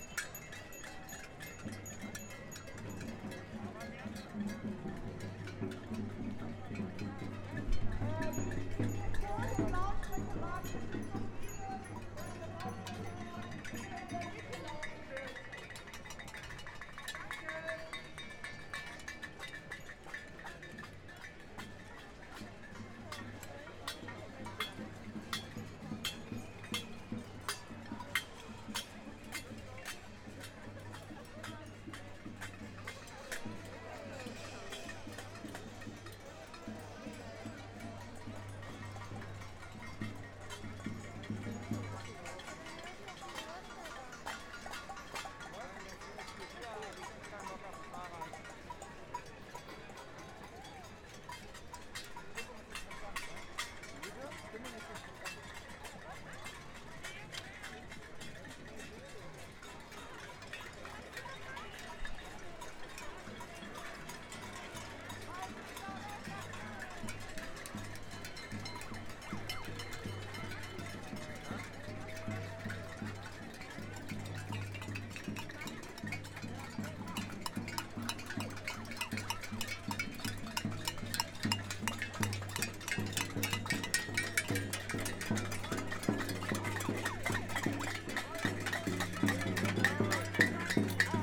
Kottbusser Straße/Hermannplatz - Mietendeckel Protest
After the federal constitutional court ruled the "Mietendeckel" (rentcap) in Berlin null, around 10.000 Berliners gathered on the same day to protest the ruling.
Recorded in the middle of the protest on a sound device recorder with Neumann KM 184 mics.
Deutschland